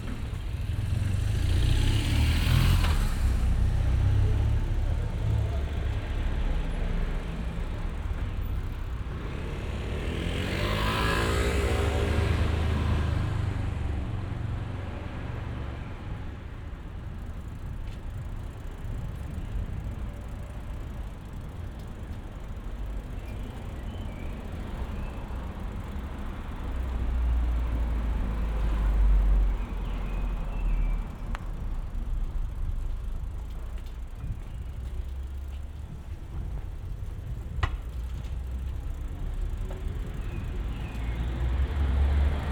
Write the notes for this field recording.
Chapter XCV of Ascolto il tuo cuore, città. I listen to your heart, city, Tuesday, June 2nd 2020. Cycling on the embankment of the Po, at the Murazzi and back walking due to a break in the bike, eighty-four days after (but day thirty of Phase II and day seventeen of Phase IIB and day eleven of Phase IIC) of emergency disposition due to the epidemic of COVID19. Start at 6:07 p.m. end at 6:59 p.m. duration of recording 52’00”, The entire path is associated with a synchronized GPS track recorded in the (kmz, kml, gpx) files downloadable here: